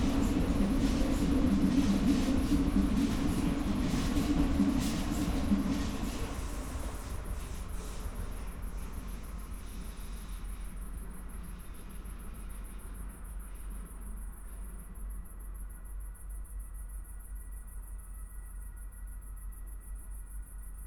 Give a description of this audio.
Köln Nippes, small road between the tracks, night ambience /w crickets, trains, (Sony PCM D50, Primo EM172)